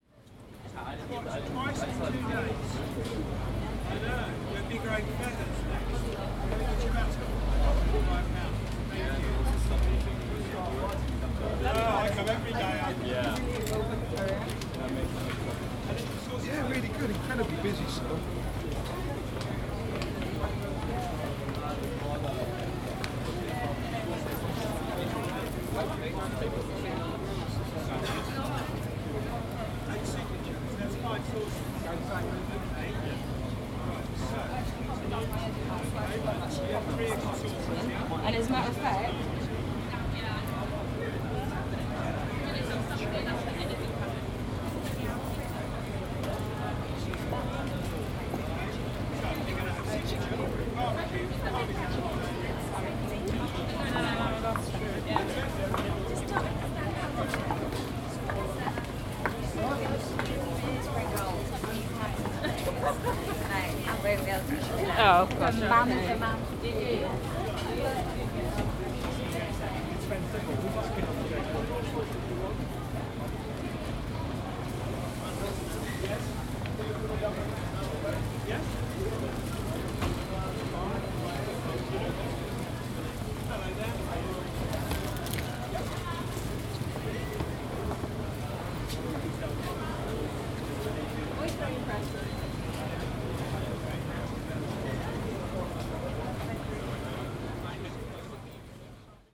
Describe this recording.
I recorded this standing sbout half way along Strutton Ground.